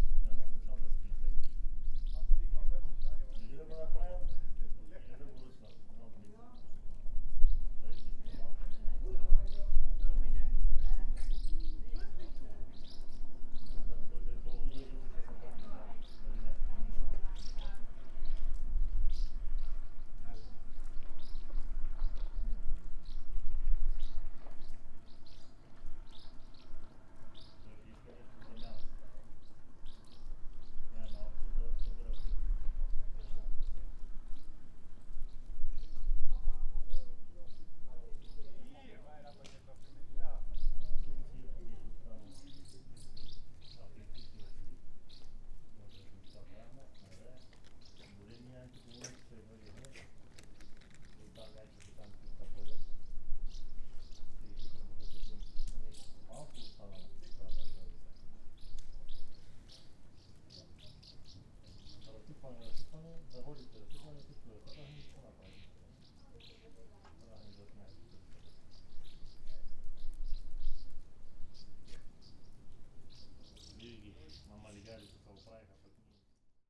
Buzludzha, Bulgaria, Drone - In front of Buzludzha - monologue
A security man is reasoning on Bulgarian about the building and the state of the society in Bulgarian. The swallows are singing, some cars in the background of austrian tourists... this is a recording with two microphones
Стара Загора, Бългaрия